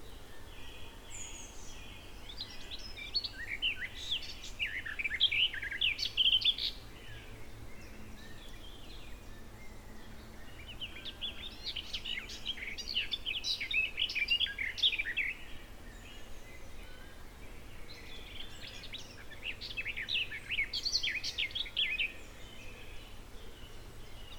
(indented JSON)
{
  "title": "Mill Wood near Brightling, East Sussex - Garden Warbler and Cuckoo",
  "date": "2018-06-09 17:00:00",
  "description": "Recorded whilst on cycle along this bridleway at TQ695227. Garden Warbler heard close to path (with Cuckoo to the north).",
  "latitude": "50.98",
  "longitude": "0.42",
  "altitude": "44",
  "timezone": "Europe/London"
}